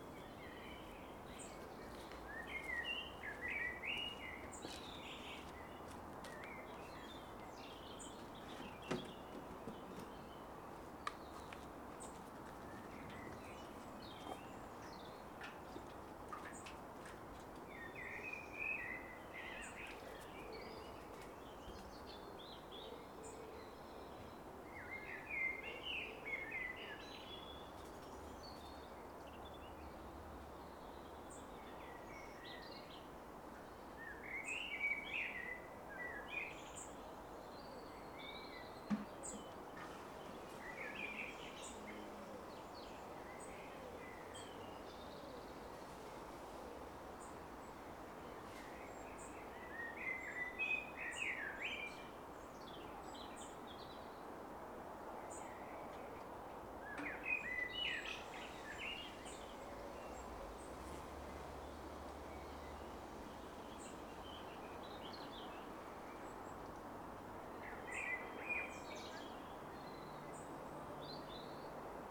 Birdsong on the Allotment - A1 and North Hill traffic in the background. Recorded using an Audio Technica AT8022 into a Zoom H4